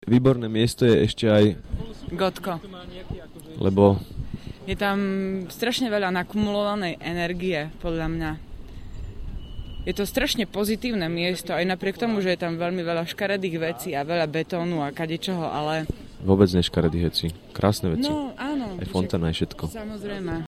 {
  "title": "placetellers walk :: link to namestie slobody",
  "date": "2008-05-29 16:44:00",
  "description": "abstract:\nwhich is another great place for you in this city? :: gotko (namestie slobody) :: because... :: there is a lot of cumulated energy, its an extremly positive place despite there is a lot of ugly things, a lot of concrete... :: not ugly but beautiful things, there is also the fountain and so on... :: yes, of course...",
  "latitude": "48.15",
  "longitude": "17.11",
  "altitude": "157",
  "timezone": "GMT+1"
}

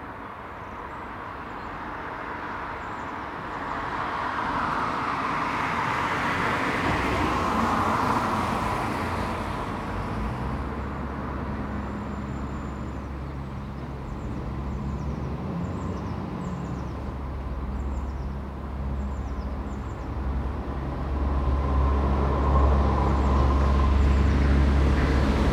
{
  "title": "Contención Island Day 27 outer west - Walking to the sounds of Contención Island Day 27 Sunday January 31st",
  "date": "2021-01-31 08:34:00",
  "description": "The Drive Westfield Drive Oakfield Road Kenton Road\nThe lightest of snow falls\ndusts the ground\nSix runners\nsix walkers\nMock-Tudor wood\non the ugly houses\nclosed curtains",
  "latitude": "55.00",
  "longitude": "-1.63",
  "altitude": "77",
  "timezone": "Europe/London"
}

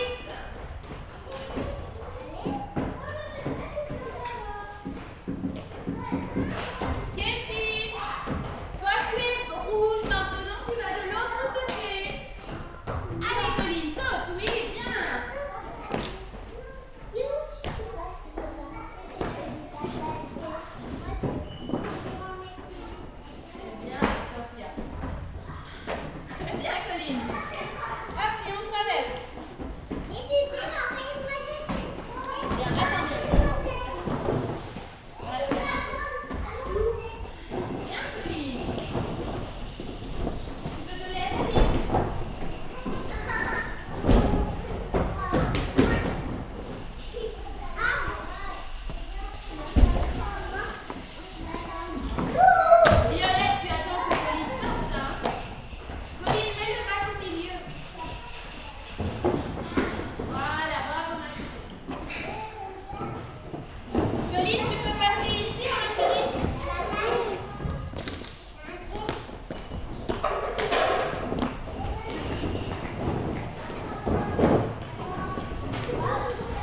{
  "title": "Court-St.-Étienne, Belgique - Psychomotor education",
  "date": "2016-02-17 10:00:00",
  "description": "Psychomotor education with very young child (3-4 years). They have to climb, to jump on pillows and run in hoops. It's difficult for them !",
  "latitude": "50.64",
  "longitude": "4.57",
  "altitude": "77",
  "timezone": "Europe/Brussels"
}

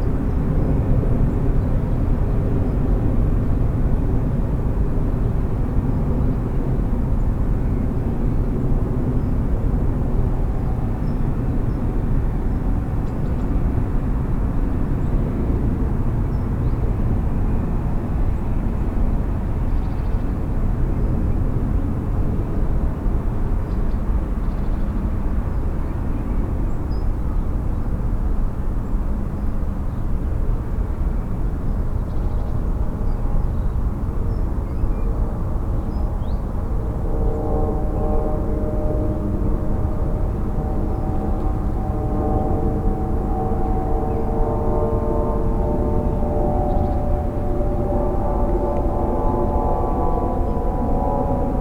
{
  "title": "Montluel, Théâtre de Verdure",
  "date": "2011-12-28 15:45:00",
  "description": "Up on the hill, noisy of all the traffic coming from the city below, planes also.\nPCM-M10, SP-TFB-2, binaural.",
  "latitude": "45.85",
  "longitude": "5.05",
  "altitude": "271",
  "timezone": "Europe/Paris"
}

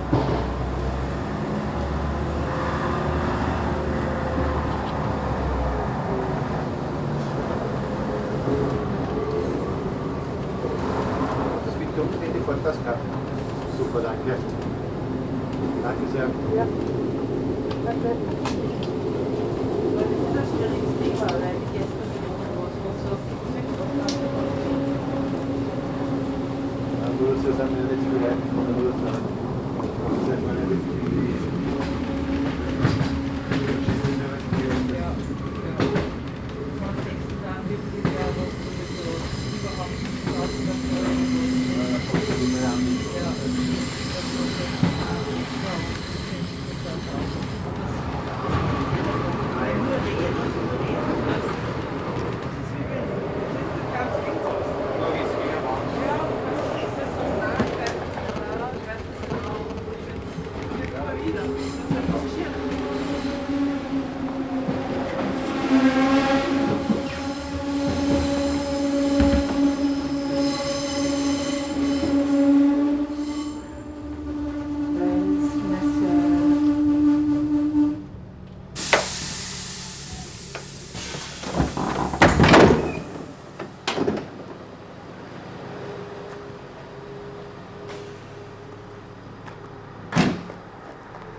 {
  "title": "Wels Messe, Wels, Österreich - Almtalbahn",
  "date": "2021-08-03 13:28:00",
  "description": "Train ride Almtalbahn from station traun to station wels messe",
  "latitude": "48.15",
  "longitude": "14.02",
  "altitude": "314",
  "timezone": "Europe/Vienna"
}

